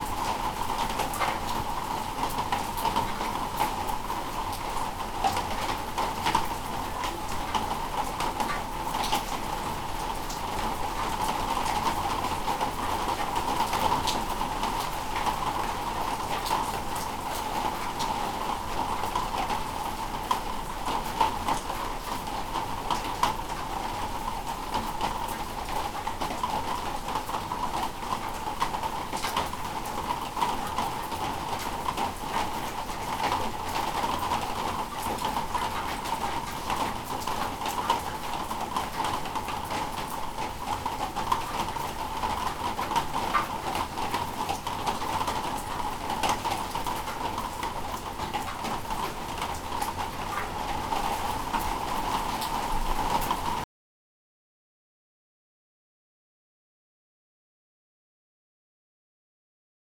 Canet den Berenguer, Valencia, Spain - WEATHER RAIN Water Drip Fall on Plastic, Small Alley, Constant
Canet d'en Berenguer, Spain
Small Alley
REC: Sony PCM-D100 ORTF